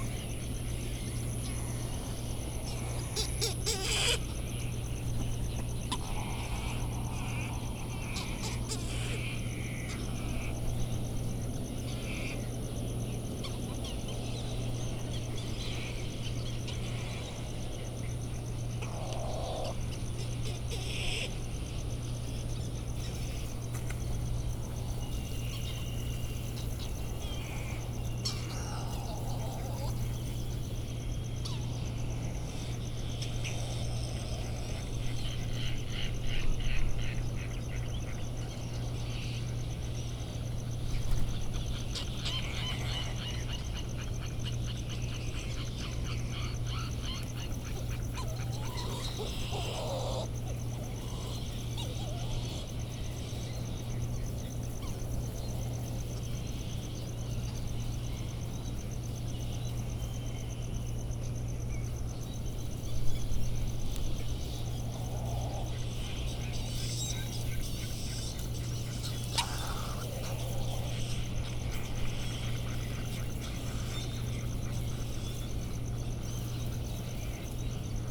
Bonin petrel soundscape ... Sand Island ... Midway Atoll ... bird calls ... bonin petrels ... laysan albatross ... white tern ... black noddy ... open lavaliers on mini tripod ... back ground noise ...